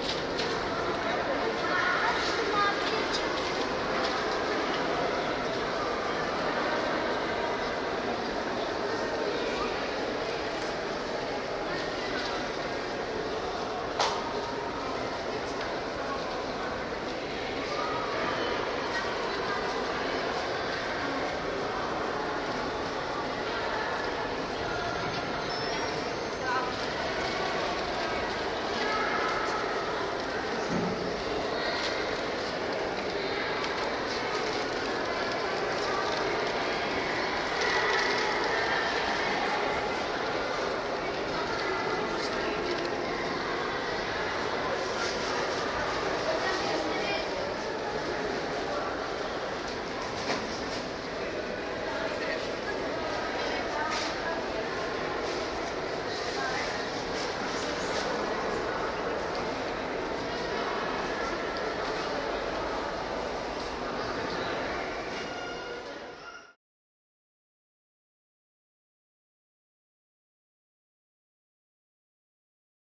{"title": "blue mosque, istanbul - Istanbul, blue mosque", "description": "inside the mosque, may 2003. - project: \"hasenbrot - a private sound diary\"", "latitude": "41.01", "longitude": "28.98", "altitude": "36", "timezone": "GMT+1"}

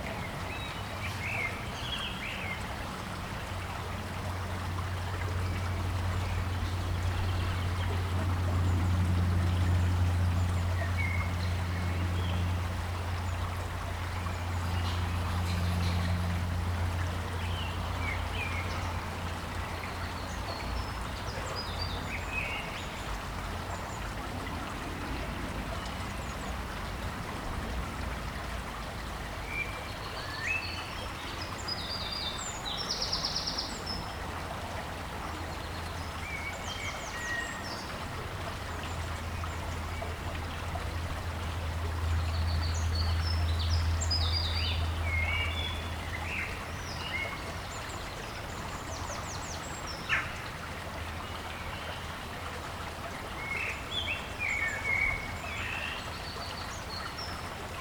{"title": "Playground - Isolated Kids", "date": "2020-04-02 17:36:00", "description": "Recorded in the local playground, at a time when it would be normally full of kids playing after school. The little park where the playground is also a popular cut-through, which makes this little park and play area normally full of people talking, playing and walking.", "latitude": "53.96", "longitude": "-2.01", "altitude": "113", "timezone": "Europe/London"}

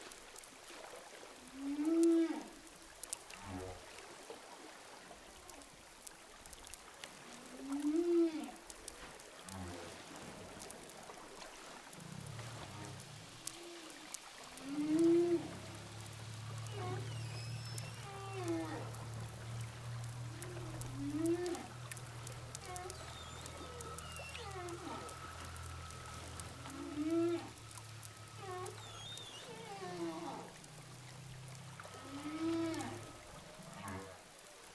{"title": "whales sound hydrophone, saint gilles de la reunion", "date": "2010-08-29 20:05:00", "description": "au large de saint gilles de la Réunion baleine à bosse", "latitude": "-21.05", "longitude": "55.21", "timezone": "Indian/Reunion"}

